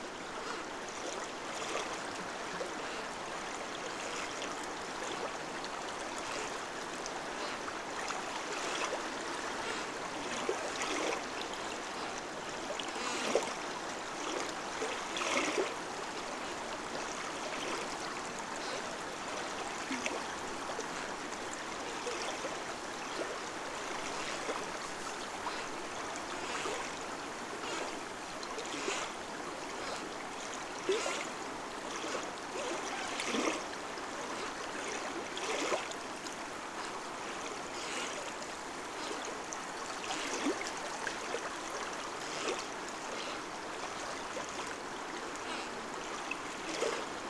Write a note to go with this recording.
flooded river. some fallen tree playing with a strong stream